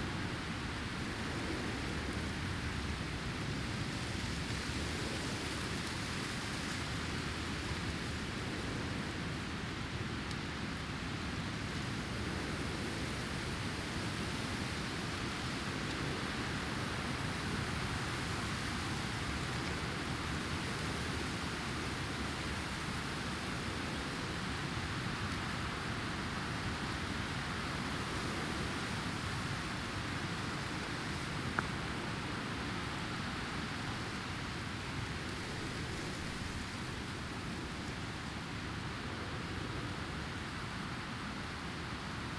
Tandel, Luxemburg - Longsdorf, wheat field in the wind
An einem Weizenfeld an einem windigen Sommertag. Der Klang des Windes in den bewegten Weizenähren.
At a wheat field on a windy summer day. The sound of the wind moving wheat ears.